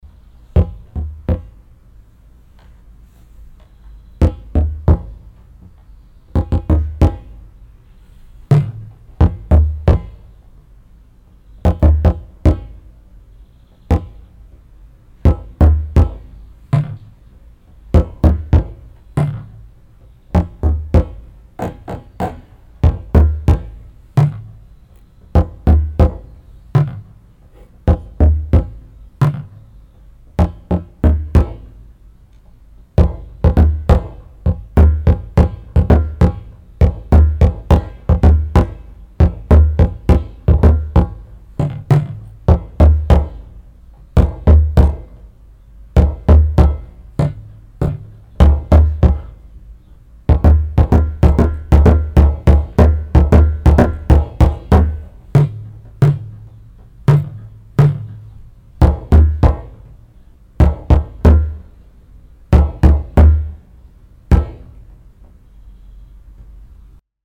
H2Orchester des Mobilen Musik Museums - Instrument Wassertrommeln - temporärer Standort - VW Autostadt
weitere Informationen unter
vw autostadt